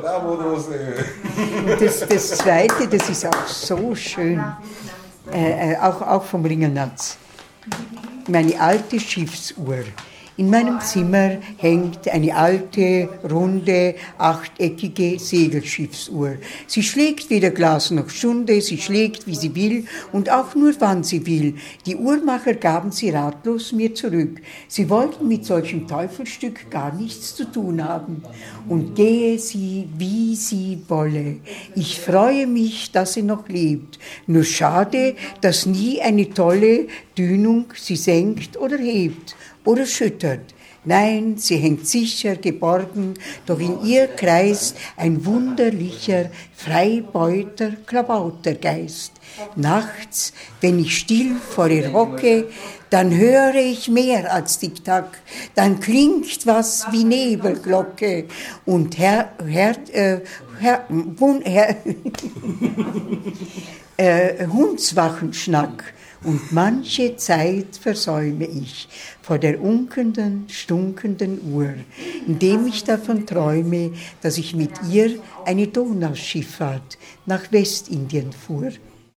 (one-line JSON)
{"title": "graz i. - rosi mild spricht joachim ringelnatz", "date": "2009-11-26 23:05:00", "description": "rosi mild spricht joachim ringelnatz", "latitude": "47.07", "longitude": "15.44", "altitude": "361", "timezone": "Europe/Vienna"}